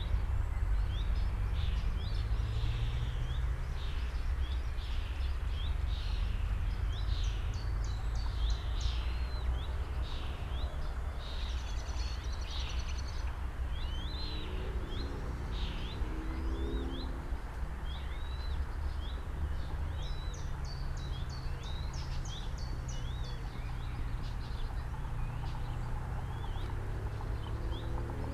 Eiche, Ahrensfelde, Germany - Grenzteich, pond ambience
ambience heard within a swampy area, call of a cuckoo (Kuckuck)
(SD702, DPA4060)